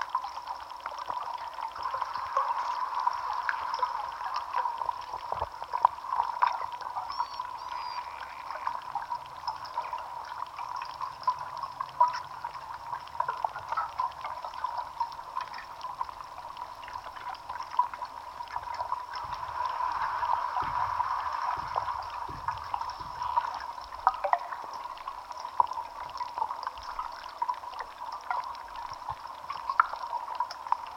Rukliai, Lithuania, lake Giedrys underwater